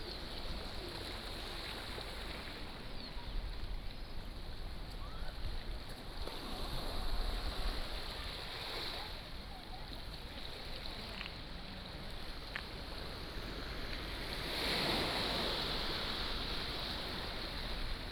石雨傘漁港, Chenggong Township - Small fishing port
Small fishing port, Sound of the waves, The weather is very hot